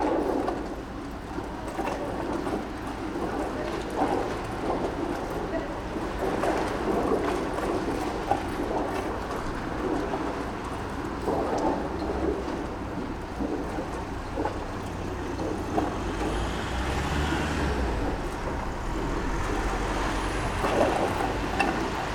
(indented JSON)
{
  "title": "Décoration de Noël",
  "date": "2009-12-24 23:59:00",
  "description": "Orléans\nLe vent sengouffre dans les décorations de Noël : lamelles de plexiglass",
  "latitude": "47.90",
  "longitude": "1.90",
  "altitude": "114",
  "timezone": "Europe/Paris"
}